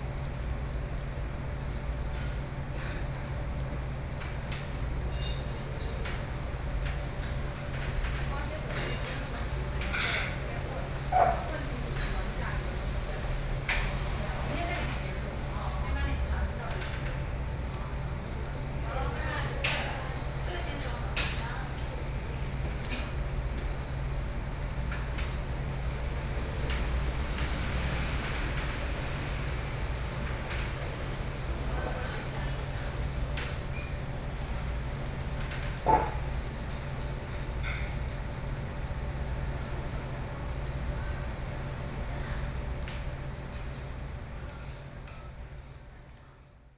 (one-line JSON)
{"title": "Dacheng Road - In the restaurant", "date": "2013-08-14 15:16:00", "description": "in the Hot Pot, Traffic Noise, Sony PCM D50 + Soundman OKM II", "latitude": "24.91", "longitude": "121.15", "altitude": "165", "timezone": "Asia/Taipei"}